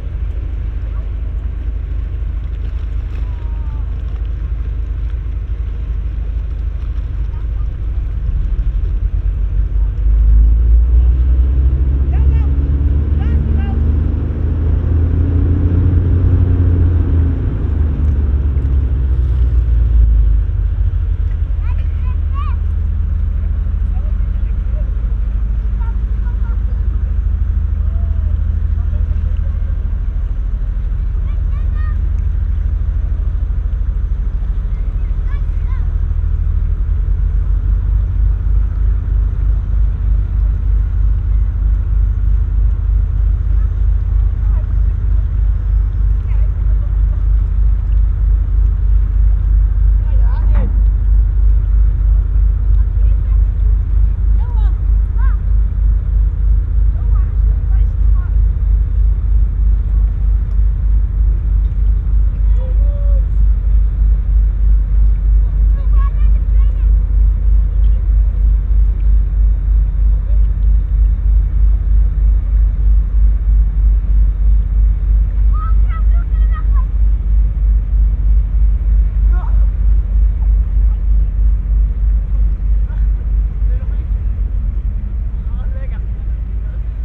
urk: staverse kade - the city, the country & me: opposite industrial harbour
tank ship manoeuvring in the harbour
the city, the country & me: june 11, 2013